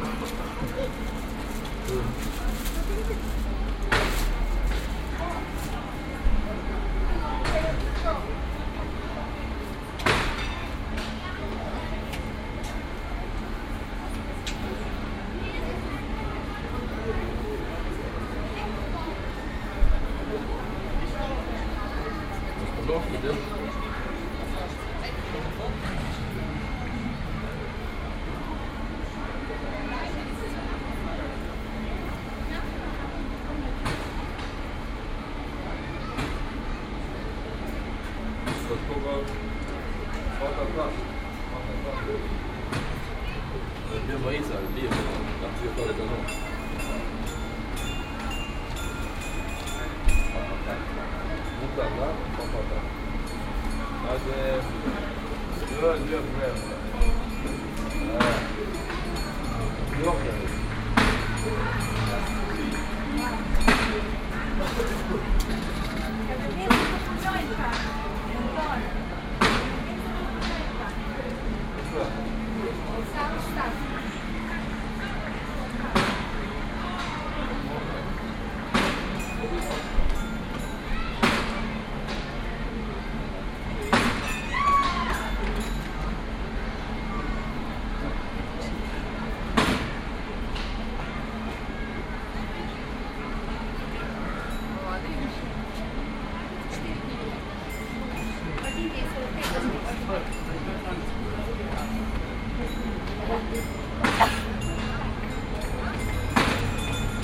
Löhrrondell, Children's day, Koblenz, Deutschland - Löhrrondell 10
Binaural recording of the square. Second day Tenth of several recordings to describe the square acoustically. You hear a sound installation on the floor and a 'Hau den Lukas'.
Koblenz, Germany, 20 May, 11:30